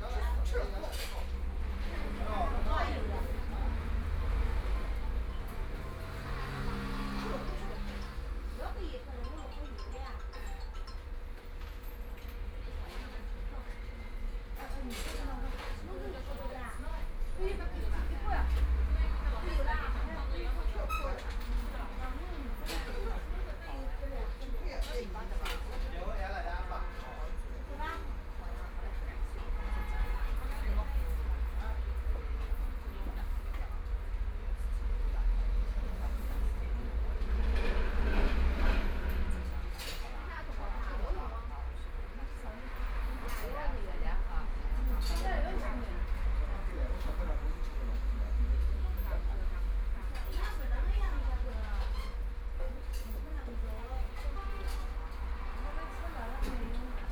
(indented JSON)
{
  "title": "Huangpu District, Shanghai - In the restaurant",
  "date": "2013-12-03 13:05:00",
  "description": "In the restaurant, Traffic Sound, Binaural recording, Zoom H6+ Soundman OKM II",
  "latitude": "31.22",
  "longitude": "121.48",
  "altitude": "10",
  "timezone": "Asia/Shanghai"
}